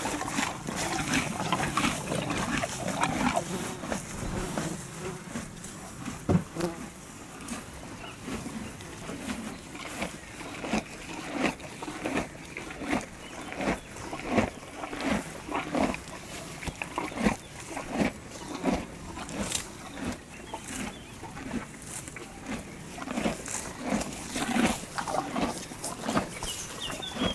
Coming back from the mine, we found horses near the car, looking at us. We gave them green grass, it was so good ! Sometimes drinking, sometimes with the flies.